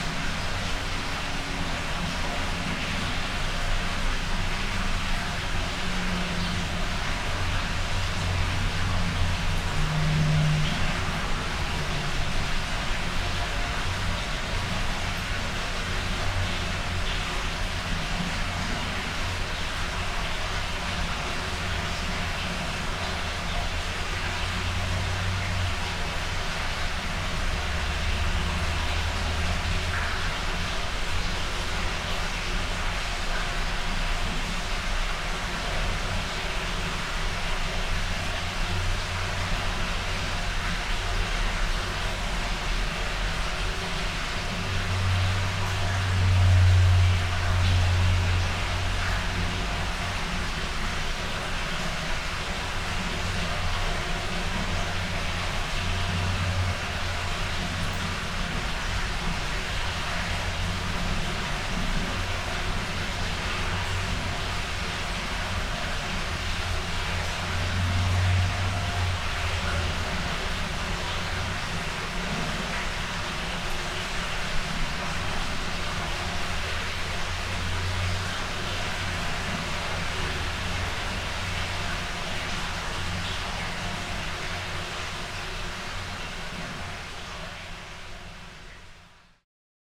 {"title": "Utena, Lithuania, in the well", "date": "2022-06-16 14:40:00", "description": "some partly open rainwater drainage well. small omni micropphones.", "latitude": "55.51", "longitude": "25.63", "altitude": "121", "timezone": "Europe/Vilnius"}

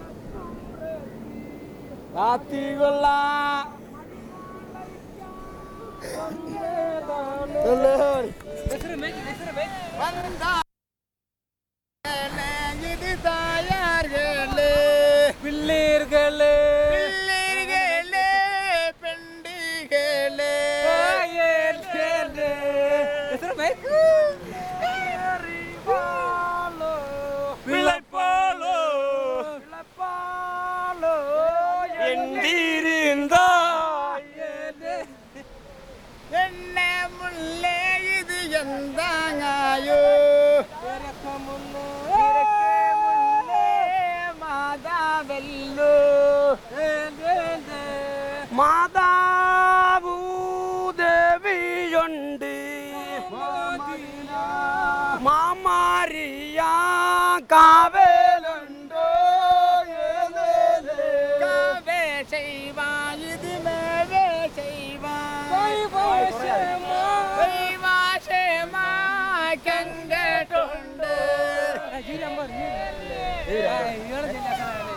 {"title": "Light House Beach Rd, Kovalam, Kerala, India - fishermen pulling net ashore", "date": "2001-08-28 15:48:00", "description": "fishermen pulling their catch ashore while singing to sustain the hard labour", "latitude": "8.39", "longitude": "76.98", "altitude": "14", "timezone": "Asia/Kolkata"}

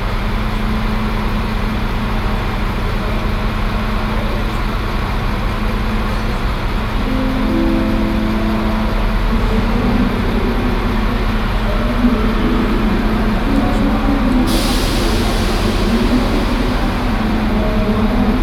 {"title": "Bahnhofsvorstadt, Bremen, Deutschland - bremen, main station, track 11", "date": "2012-06-14 09:30:00", "description": "At a track at bremen main station. The sound of different trains passing by or entering the station, rolling suitcases passing a metal surface, a queeking elevator door and an announcement.\nsoundmap d - social ambiences and topographic field recordings", "latitude": "53.08", "longitude": "8.81", "altitude": "10", "timezone": "Europe/Berlin"}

